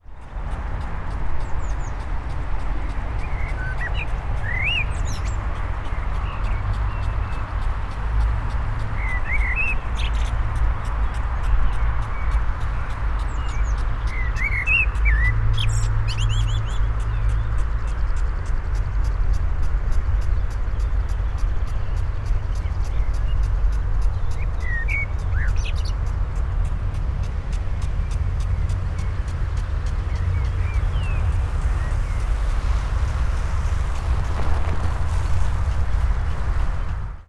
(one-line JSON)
{"title": "botanischer garten, Berlin, Germany - blackbird", "date": "2013-05-16 11:49:00", "description": "sounds of irrigation, traffic noise, blackbird, drops of water", "latitude": "52.45", "longitude": "13.31", "altitude": "46", "timezone": "Europe/Berlin"}